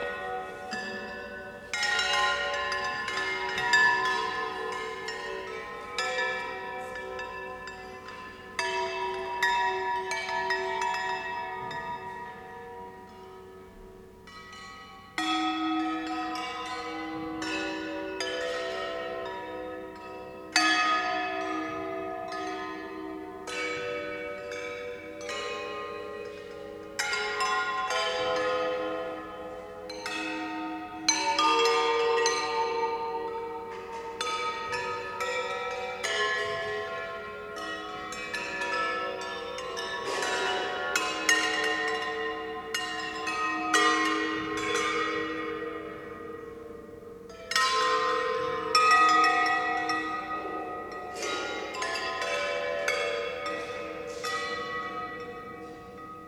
KADOC Chapel, Frederik Lintsstraat, Leuven, Belgium - Celeste Boursier-Mougenot Clinamen installation
Sound installation "Clinamen" by French artist Celeste Boursier-Mougenot in the chapel of KADOC research centre. The installation was part of the program for the Hear Here festival.
Vlaanderen, België / Belgique / Belgien